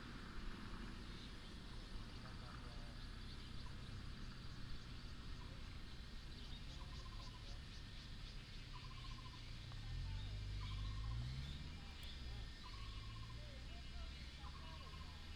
Small countryside, Birds sound, Cicada cry, traffic sound
7 August 2017, 17:37